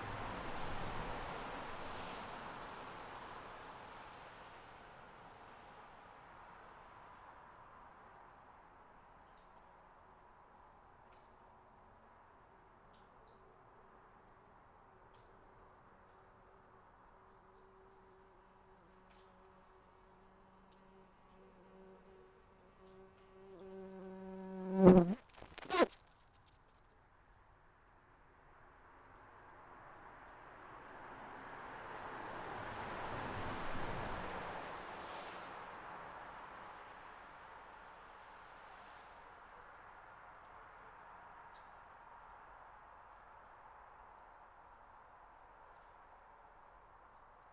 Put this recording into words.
some crack under the bridge. bumbkebees fly to the crack...